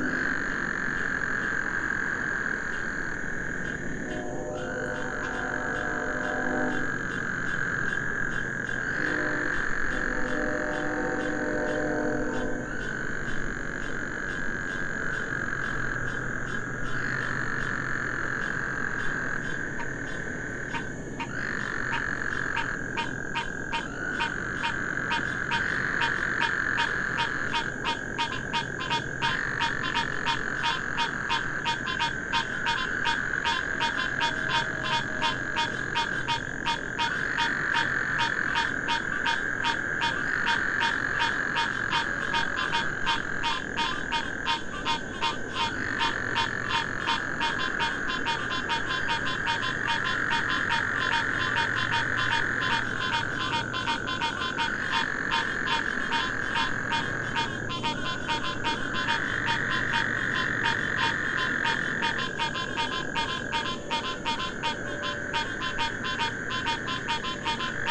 Frogs rage with desire while train passes, Sugar Land, TX. - Frogs rage with desire while train passes
Post rain mating calls of several species of frogs and insects, plus approaching passenger train, distant highway, cars, motorcycle, crickets, etc. Oyster Creek, Sugar Land, Texas, suburban, master planned community.
Tascam DR100 MK-2 internal cardioids
TX, USA, 1 August 2012